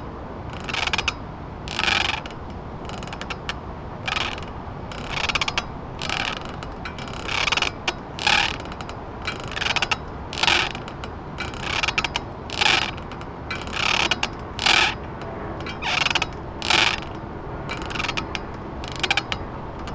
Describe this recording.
Incoming swells moving a steel ladder, it's brackets squeaking against a damp wood pylon, which has been slowly coming loose over the years. Sony PCM-MD50